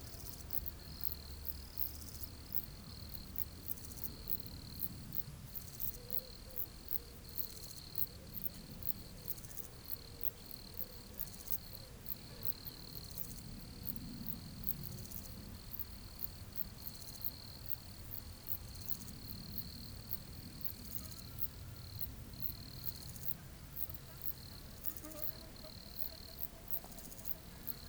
Lombron, France - Crickets
On a corner of the Lombron farm, small criquets are singing quietly. This is a peaceful evening in the farm.